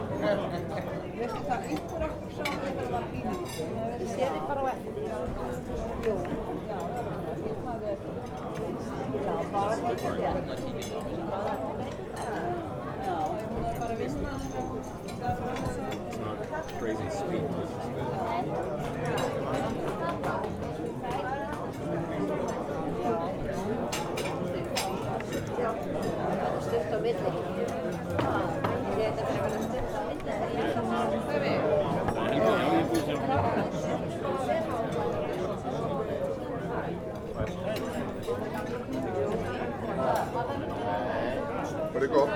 reception at Hotel Saga after Helga's funeral, Rekjavik, Iceland, 03.July.2008